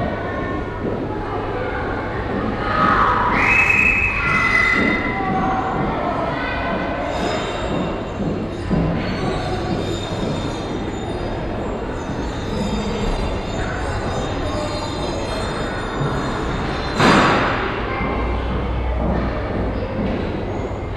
Inside one of the two main cube halls of the architecture. The sound of doors and steps reverbing in the high and huge glass and steel construction.
This recording is part of the exhibition project - sonic states
soundmap nrw - sonic states, social ambiences, art places and topographic field recordings
Düsseldorf, Germany, 23 November, 14:15